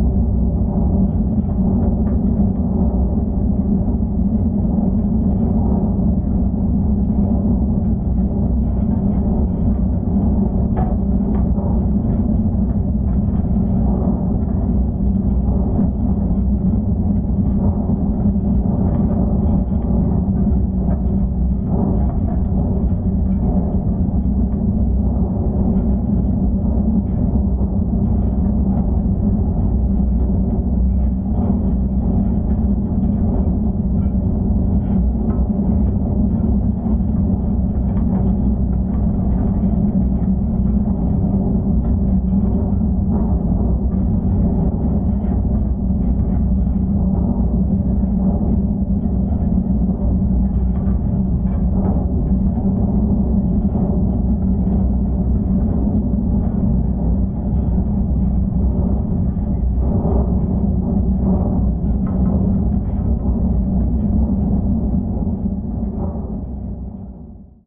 South Beach, South Haven, Michigan, USA - South Beach Flag Tower
Geophone recording from one of the legs of a steel flag tower at South Beach. Very windy morning.